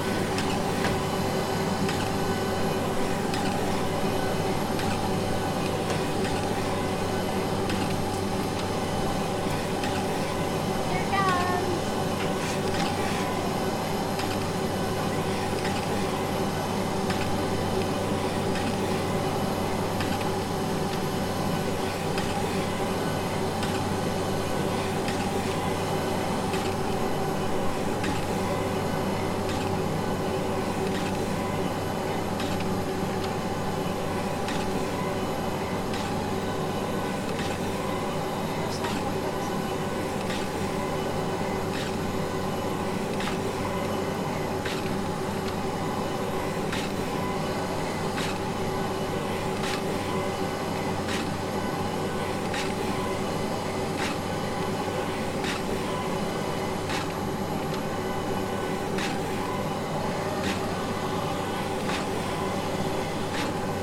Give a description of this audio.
This is the sound of digital proofs being printed out at Williams Press, Berkshire, to check all is well with the PDFs before burning metal printing plates for the lithographic printing process.